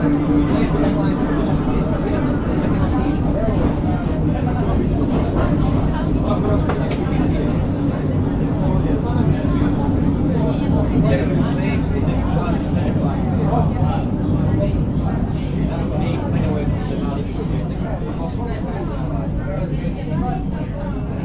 somewhere between Chop and Uzhhorod - Transcarpathian elektrichka ride
Taking a regional commuter train from Uzhgorod to Chop
Ukraine, 1 December